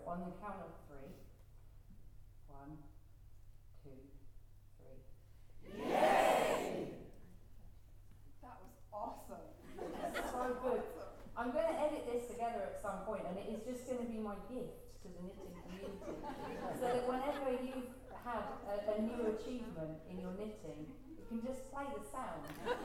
Shetland Wool Week Opening Ceremony, Bowls Hall, Clickimin Leisure Centre, Lerwick, Shetland Islands - Audience participation and the birth of Knitting Pundits
For Shetland Wool Week this year, I decided that I needed to launch a new sound piece. The piece is called Knitting Pundits, and involves myself and my wondrous comrade Louise Scollay (AKA Knit British) commentating on the technical skillz of knitters in the manner of football pundits. We wrote out a script which included a lot of word play around foot-work (football) and sock construction (knitting) and also involving superb puns involving substitutions (as in when you run out of yarn) and so on. We read out our entertaining script and then explained that to really bring the concept alive, we needed some sounds from the audience - the sense of an engaged stadium of knitters, following the play with rapt attention. To collect their responses and to create this soundscape, I read out scenarios in knitting which I then correlated to football. An offside situation; a knitting foul; a goal; winning the match; and making it to the wool cup.
Shetland Islands, UK